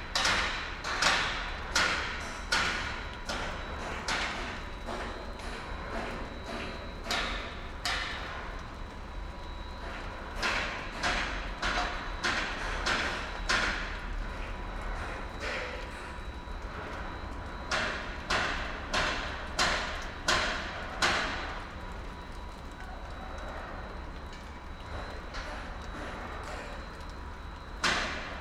Elgar Rd S, Reading, UK - Weston Homes Reading Riverside Construction Noise
Weston Homes Reading Riverside Construction of 112 flats has been going on for a year. We as local residents over six years managed to get planning proposals overturned, but at the eleventh hour it went to central government and was approved. The lady inspector of development said that "it would have no effect on the local environment" Sony M10 with custom boundary array.
27 November, ~16:00